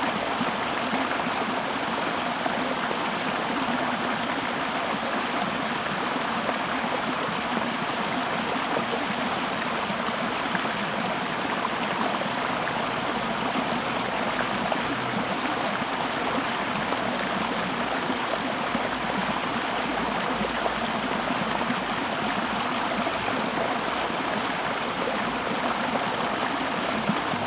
桃米紙教堂, 南投縣埔里鎮桃米里, Taiwan - Flow sound
Flow sound
Zoom H2n MS+XY